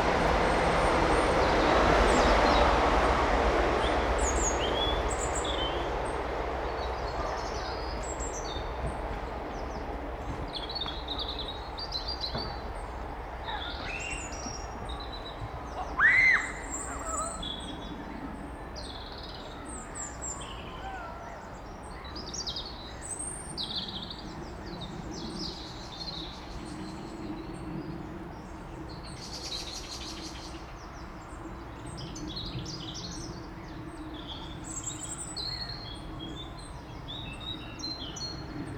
This lovely bit of land by the river will be turned into the East Reading Mass Rapid Transit (MRT) scheme. Sony M10 Rode VideoMicProX